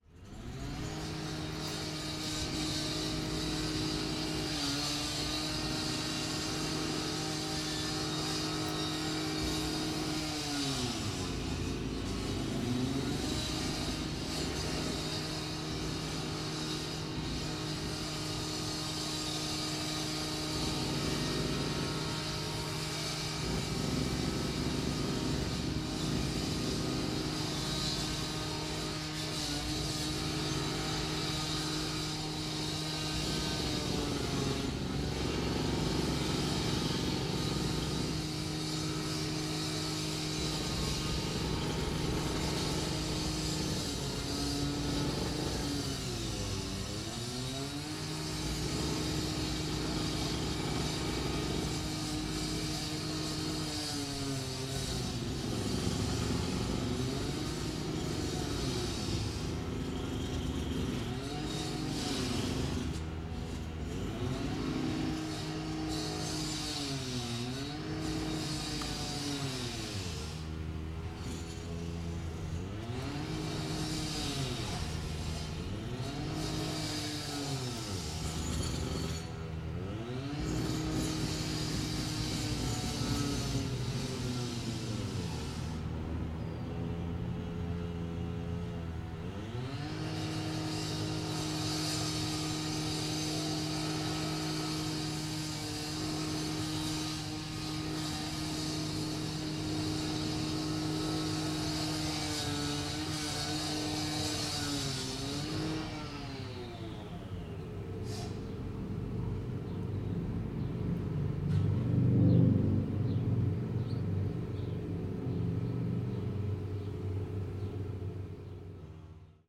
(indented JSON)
{
  "title": "Honey Hive, Bentonville, Arkansas, USA - Honey Hive",
  "date": "2022-04-23 11:31:00",
  "description": "Construction as heard outside the Honey Hive.",
  "latitude": "36.38",
  "longitude": "-94.21",
  "altitude": "393",
  "timezone": "America/Chicago"
}